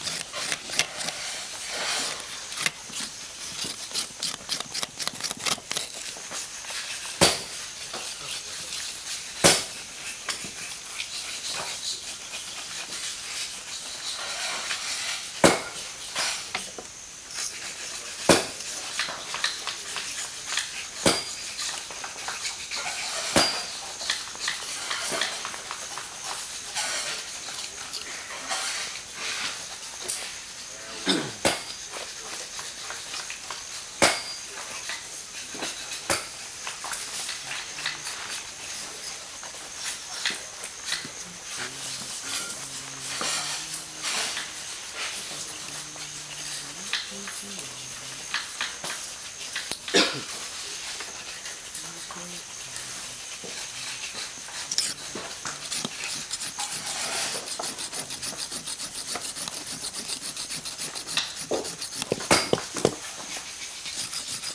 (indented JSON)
{
  "title": "Mapia- Amazonas, Brazilië - Mapia-Santo Daime-scraping of Banisteriopsis caapi",
  "date": "1996-07-07 09:17:00",
  "description": "Mapia- church of Santo Daime- preperations to make Ayahuasca drink. This is the sounds of the scraping of Banisteriopsis caapi, the DMT holding ingredient. The songs are called hinario's and are received from the plant spirits.",
  "latitude": "-8.46",
  "longitude": "-67.44",
  "altitude": "103",
  "timezone": "America/Manaus"
}